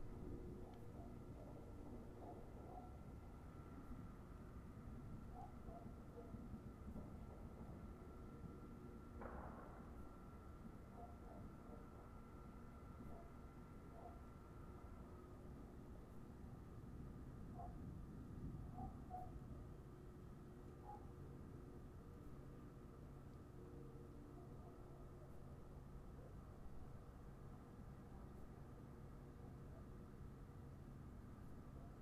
South Deering, Chicago, IL, USA - Guns of New Year 2014
Recording gun shots from neighbors to bring in the new year.
1 January 2014